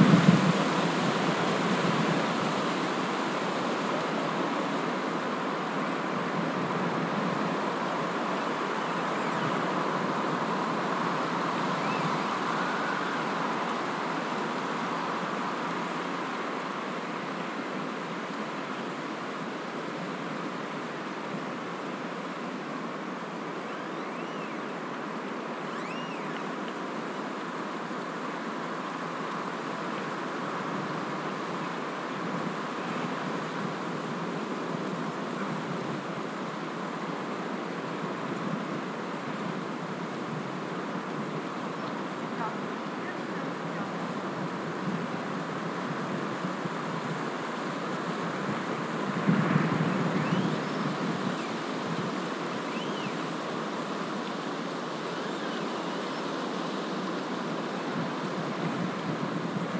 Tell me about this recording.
Recording of a spa park atmosphere on a windy day with clearly hearable tree branches squeaking. Quality isn't best due to the weather conditions... Recorded with an Olympus LS-P4.